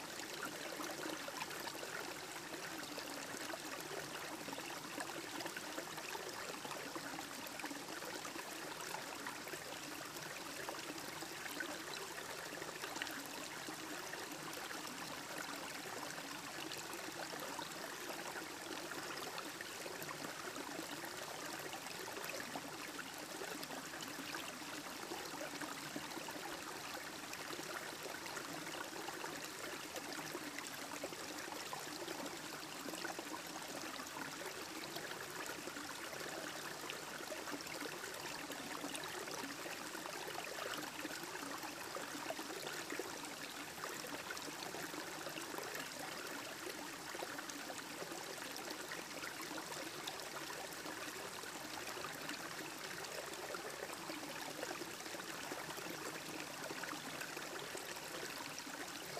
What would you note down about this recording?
cascade trail creek, spring 2011